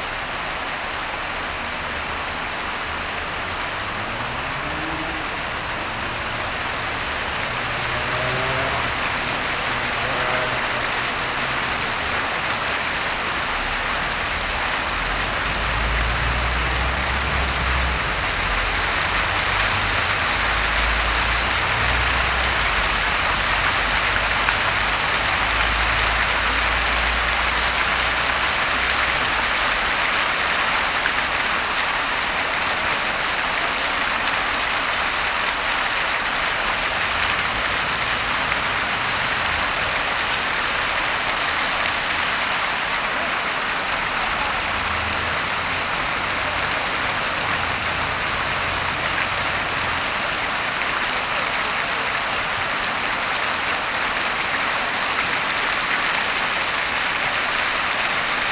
{"title": "Rennes, Fontaine de la gare (droite)", "date": "2011-05-08 10:18:00", "description": "Fontaine de droite au sol, jet vertical de la gare de Rennes (35 - France)", "latitude": "48.10", "longitude": "-1.67", "altitude": "29", "timezone": "Europe/Paris"}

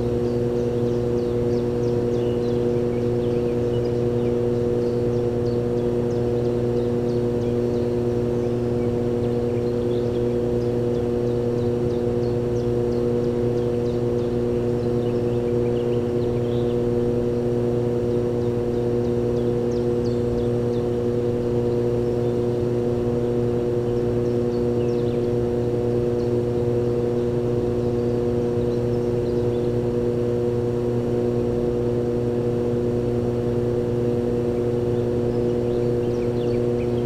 {"title": "ERM fieldwork -mine air intake facility", "date": "2010-07-03 14:40:00", "description": "ventilation air intake facility from an oil shale mine 70+ meters below", "latitude": "59.21", "longitude": "27.43", "altitude": "74", "timezone": "Europe/Tallinn"}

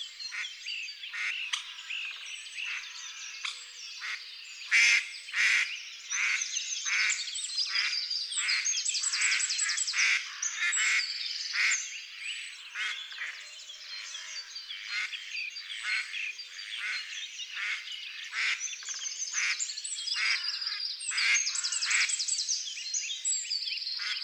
{"title": "Unnamed Road, Colomieu, France - 19990502 0421 lac-arboriaz 00-21-47.055 00-45-12.666", "date": "1999-05-02 04:20:00", "description": "19990502_0421_lac-arboriaz\ntascam DAP1 (DAT), Micro Tellinga, logiciel samplitude 5.1", "latitude": "45.75", "longitude": "5.61", "altitude": "352", "timezone": "GMT+1"}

